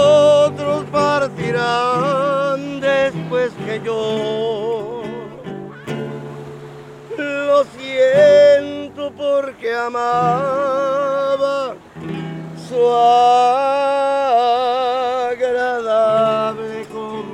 C., Boulevard Turístico Bording, Progreso, Yuc., Mexique - Progresso - Armando
Progresso - Mexique
Sur la plage, quelques minutes avec Armando
Yucatán, México, 23 October 2021